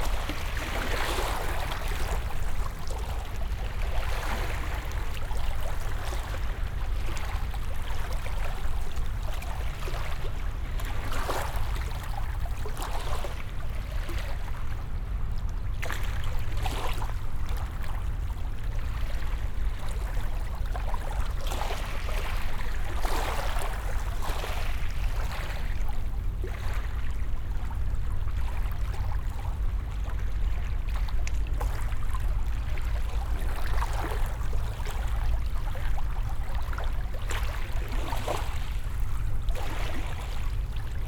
Unnamed Road, Croton-On-Hudson, NY, USA - Hudson Croton Point
The Hudson hits the beach at Croton Point in gentle waves. The resonant vibration, hum, and pulses of the trains and machines' big diesel engines along the shore are ever-present.
This recording was taken during artistic research together with Bruce Odland (O+A).
New York, United States, November 2019